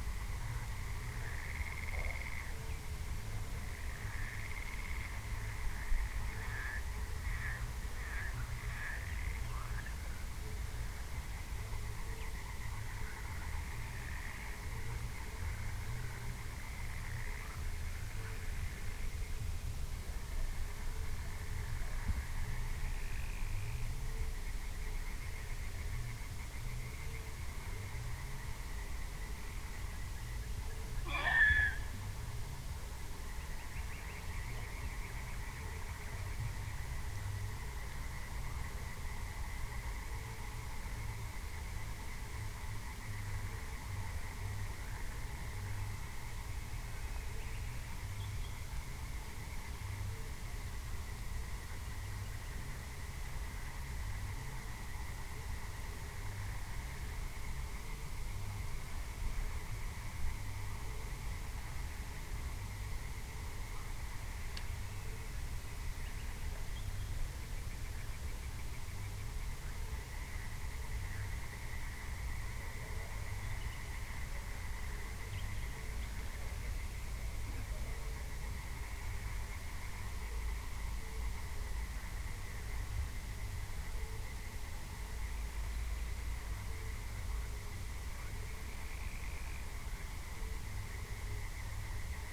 klaushagen: field - the city, the country & me: nightly field ambience
nightly field ambience, frogs and/or toads, horses, nithingale and other animals, music in the distance
the city, the country & me: may 26, 2017